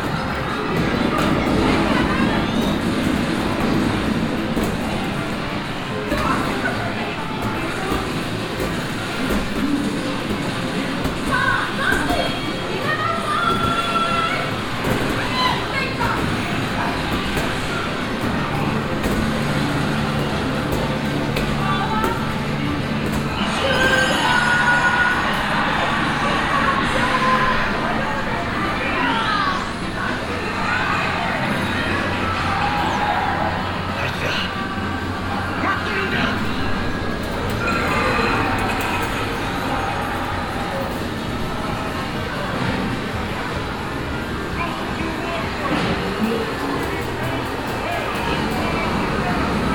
yokohama, game hall
On the second floor of a huge game hall house at the edge of chinatown. The sound of many and different console games playing simultaneously. In the background some pop music trying to come through.
international city scapes - topographic field recordings and social ambiences
28 June 2011